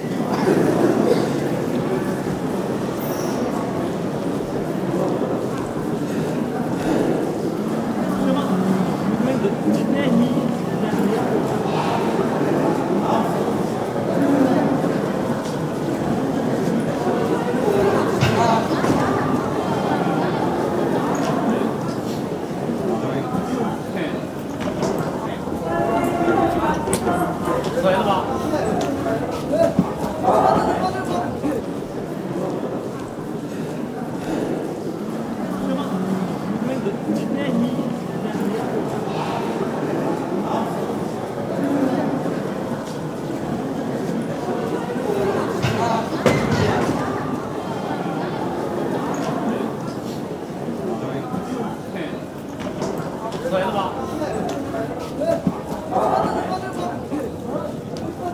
{"title": "beijing, main station, booking hall", "date": "2009-12-13 13:39:00", "description": "dense atmosphere at the main station in the morning. trolleys, steps, conversations in the reverbance of the big hall\ninternational cityscapes - topographic field recordings and social ambiences", "latitude": "39.90", "longitude": "116.42", "altitude": "50", "timezone": "Europe/Berlin"}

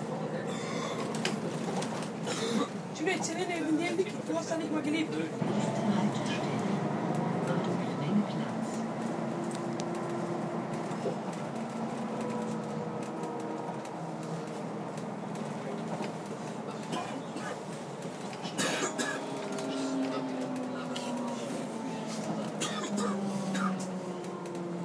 {"date": "2010-10-08 13:30:00", "description": "Mit den Buslinien 154, 156 und 351 über 27 Stationen von der nördlichsten (Steinwerder, Alter Elbtunnel) bis zur südlichsten (Moorwerder Kinderheim) Bushaltestelle Wilhelmsburgs.", "latitude": "53.54", "longitude": "9.97", "altitude": "5", "timezone": "Europe/Berlin"}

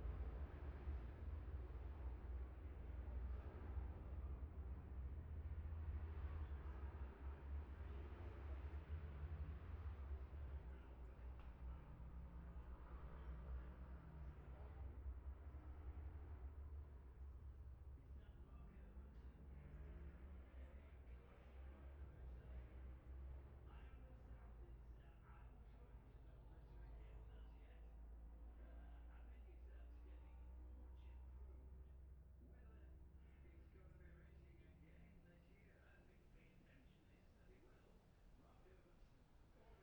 {"title": "Jacksons Ln, Scarborough, UK - olivers mount road racing 2021 ...", "date": "2021-05-22 10:20:00", "description": "bob smith spring cup ... twins group A practice ... luhd pm-01 mics to zoom h5 ...", "latitude": "54.27", "longitude": "-0.41", "altitude": "144", "timezone": "Europe/London"}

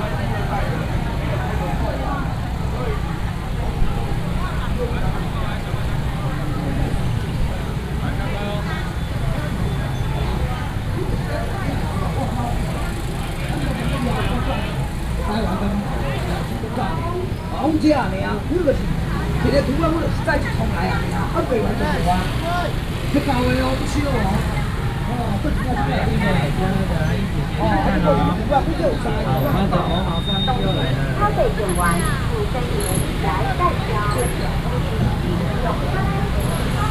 {"title": "Datong St., Shalu Dist., Taichung City - A variety of vendors", "date": "2017-01-19 10:12:00", "description": "Traditional markets, Very noisy market, Street vendors selling voice, A lot of motorcycle sounds", "latitude": "24.24", "longitude": "120.56", "altitude": "16", "timezone": "GMT+1"}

